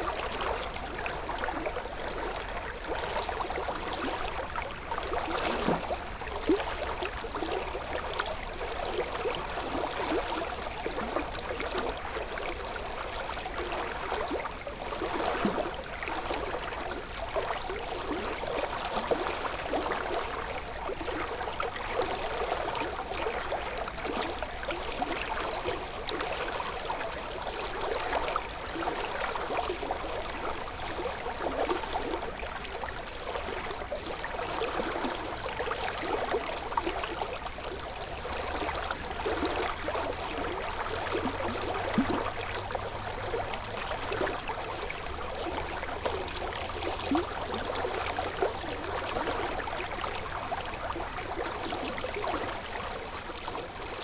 The Seine river is now large and alive, 140 km after the spring. This of fish river is very endearing, clear water, beautiful green trees. It's a bucolic place.